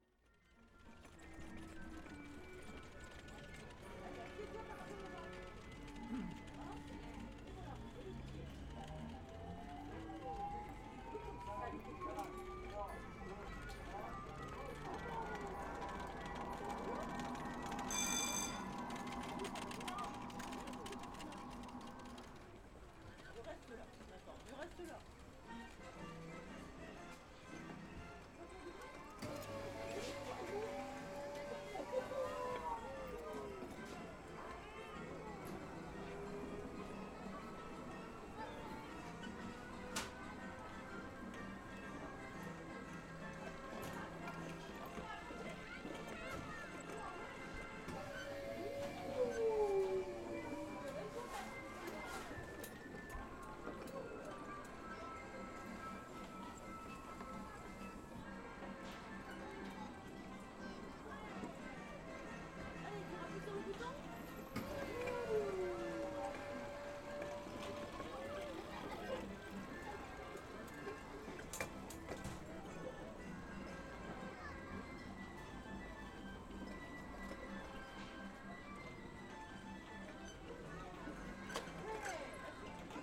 Bd du Dr Jules Pouget, Le Touquet-Paris-Plage, France - Le Touquet - manège
Le Touquet
Sur le front de mer
Ambiance du manège.